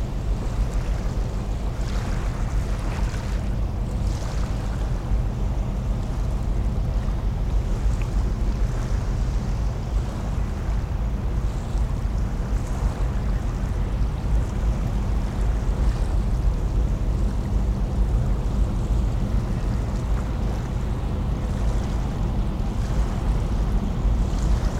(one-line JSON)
{"title": "Am Molenkopf, Köln, Deutschland - ships passing", "date": "2000-06-15 11:40:00", "description": "several ships passing by, waves and pebbles\nrecorded with the microphones only 10 cm from the ground on an Aiwa HD-S1 DAT", "latitude": "50.97", "longitude": "7.00", "altitude": "38", "timezone": "Europe/Berlin"}